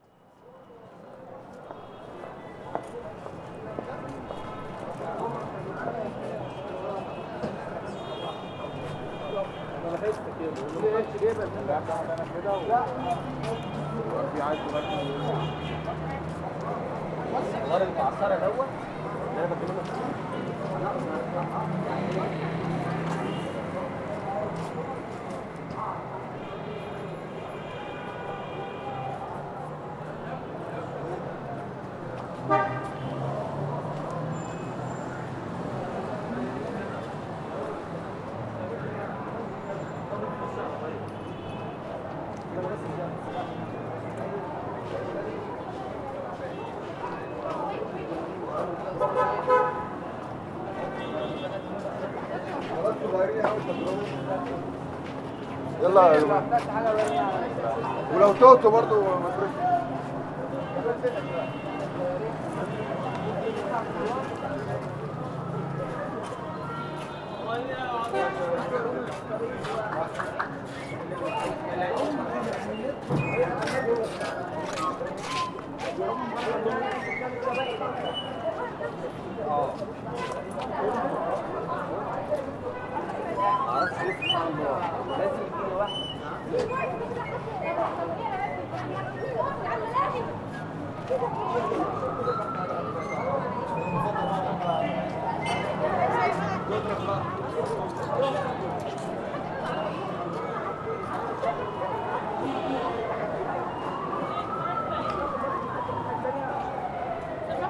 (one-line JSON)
{"title": "Tahrir Square, Al-Qahira, Ägypten - Tahrir Square", "date": "2012-05-08 15:28:00", "description": "The recording was made in the evening hours (about 9.30pm) on april 30th using a Zoom H4N. Passersby, a kid playing on a toy pan flute, an ambulance passing. In opposite is the tent camp of the democracy movement.", "latitude": "30.04", "longitude": "31.24", "altitude": "50", "timezone": "Africa/Cairo"}